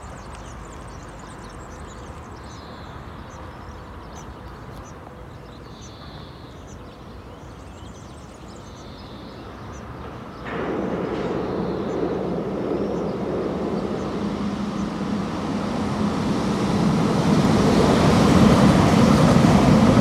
Via Gentile da Fabriano, Fano PU, Italia - Paesaggio sonoro con treno
La registrazione è stata realizzata con un registratore digitale Tascam DR-5 posizionato sopra il muro di cinta del centro storico di Fano davanti alla Rocca Malatestiana
Marche, Italia, May 2022